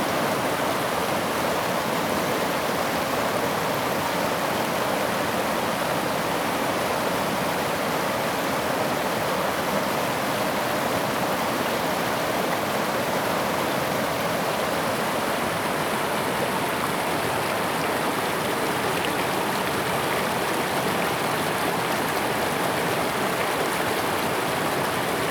成功里, Puli Township, Nantou County - Brook
Brook, In the river, stream
Zoom H2n MS+XY
19 April 2016, 15:03